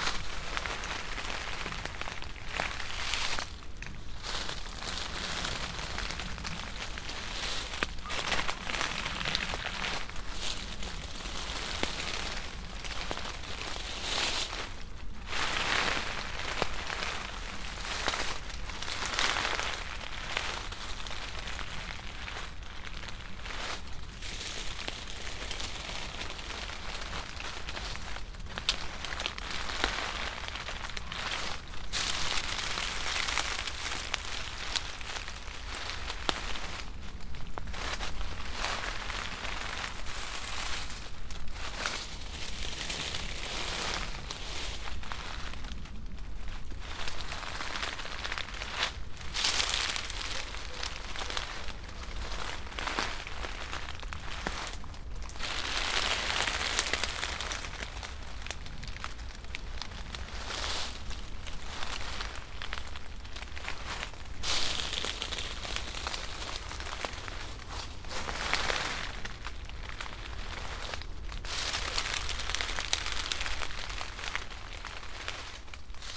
dry leaves of an aspen on high soft grass
Markovci, Slovenia, 30 September 2012, ~17:00